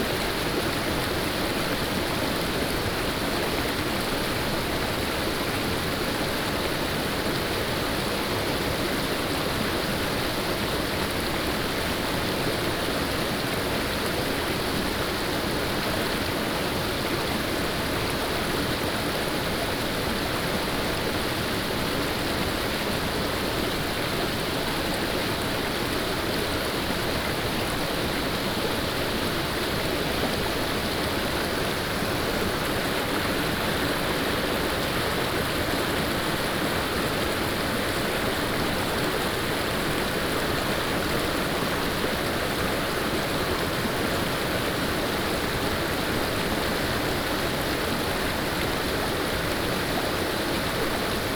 種瓜坑溪, 成功里, Puli Township - Stream sound
Stream sound
Binaural recordings
Sony PCM D100+ Soundman OKM II